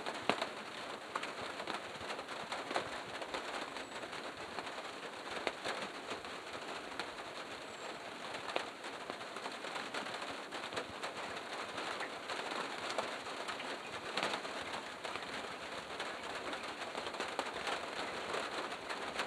Horní Orlice, Červená Voda, Česká republika - rain